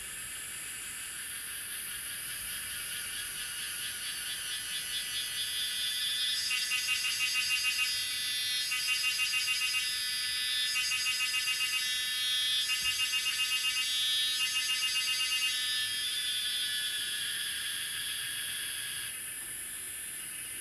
水上巷桃米里, Puli Township, Nantou County - Faced woods
Faced woods, Birds called, Cicadas called
Zoom H2n MS+XY